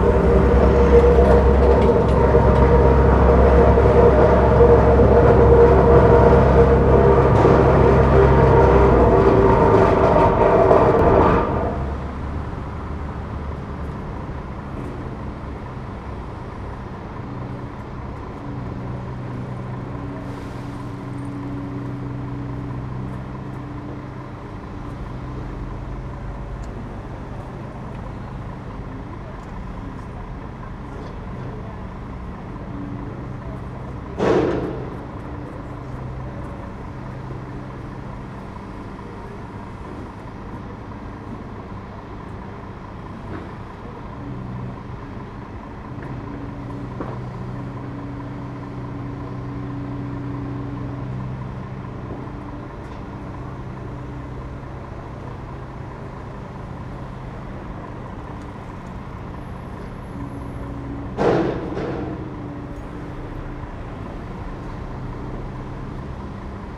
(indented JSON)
{
  "title": "berlin: friedelstraße - the city, the country & me: sewer works",
  "date": "2013-12-05 14:50:00",
  "description": "vibrating tamper, excavator loads a truck\nthe city, the country & me: december 5, 2013",
  "latitude": "52.49",
  "longitude": "13.43",
  "altitude": "46",
  "timezone": "Europe/Berlin"
}